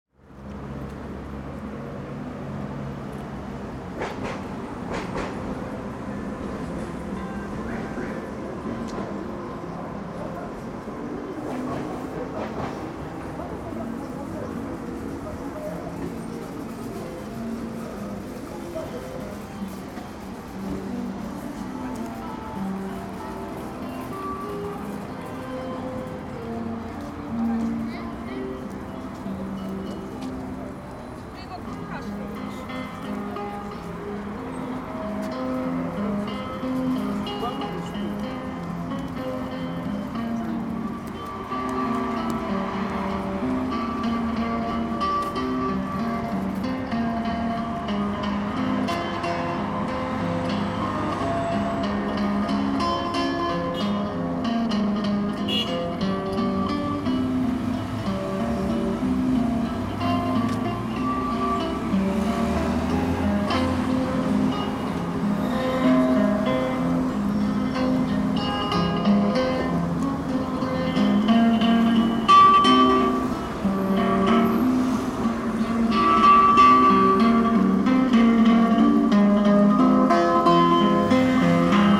пр. Ленина, Барнаул, Алтайский край, Россия - Street musician near Pioneer mall
Street musician near Pioneer mall. Music (guitar through cheap amplifier), crowd, traffic, streetcars.